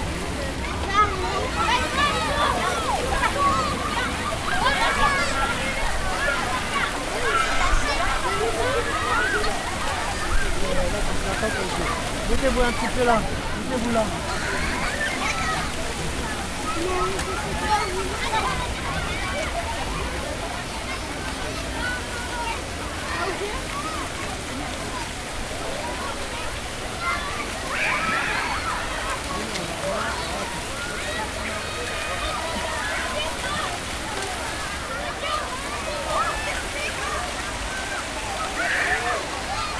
La Villette, Paris, France - Kids playing in the Fountain
Fontaine place de la Rotonde de la Villette, Paris, (Jaurès)
Kids playing in the fountain on a hot summer day.
26 August 2014, ~15:00